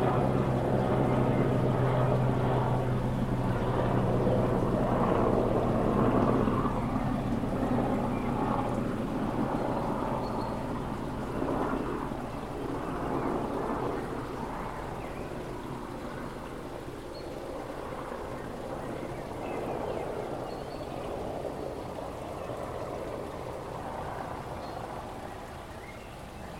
Culver Brook Exeter UK - Culver Brook and Helicopter
This recording was made using a Zoom H4N. The recorder was positioned on the footbridge near the weir. The nature sounds were overlaid by a military helicopter passing overhead. This recording is part of a series of recordings that will be taken across the landscape, Devon Wildland, to highlight the soundscape that wildlife experience and highlight any potential soundscape barriers that may effect connectivity for wildlife.